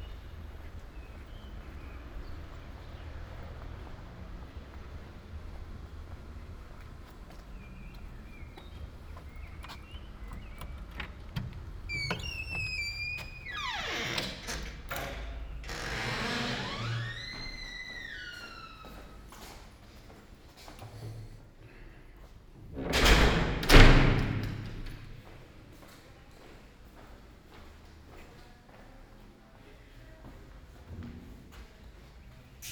“La flânerie III après trois mois aux temps du COVID19”: Soundwalk
Chapter CV of Ascolto il tuo cuore, città. I listen to your heart, city
Friday, June 12th 2020. Walking in the movida district of San Salvario, Turin ninety-four days after (but day forty of Phase II and day twenty-seven of Phase IIB and day twenty-one of Phase IIC) of emergency disposition due to the epidemic of COVID19.
Start at 7:30 p.m., end at h. 8:10 p.m. duration of recording 39'46''
As binaural recording is suggested headphones listening.
The entire path is associated with a synchronized GPS track recorded in the (kml, gpx, kmz) files downloadable here:
This soundwalk follows in similar steps as two days ago, June 10, and about three months earlier, Tuesday, March 10, the first soundtrack of this series of recordings.